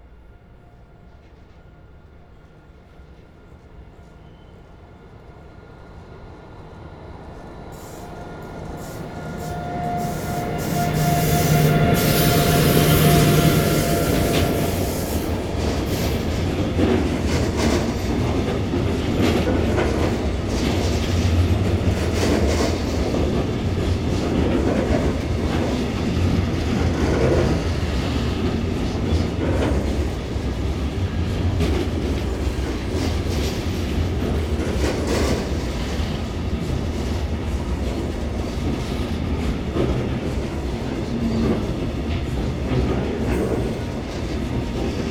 Dobšinského, Bratislava, Slovakia - Trains at Bratislava Main Station
Freight train and passenger train at Bratislava Main Station.